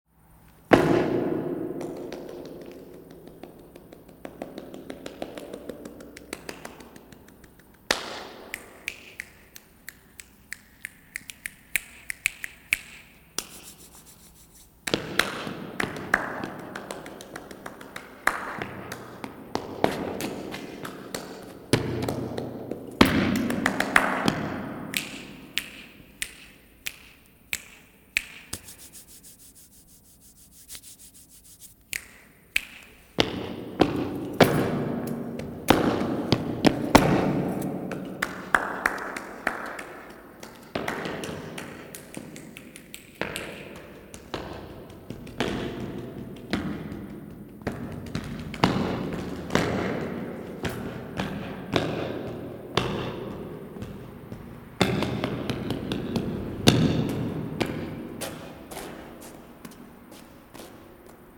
Maribor, Koroska cesta, Vinarjski potok - Jamming with location / another triggering acoustics session
No artificial processing, just playing with interesting naturally occuring echoes of a sub street passage. Part II - more stomping.
15 August 2012, ~23:00